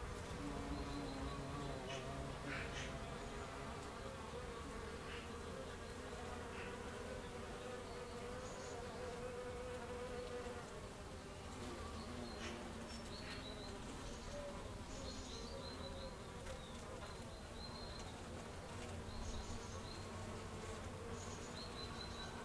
Lavacquerie, France - Bees
Bees on a Passiflore Tree at Les Esserres
Binaural recording with Zoom H6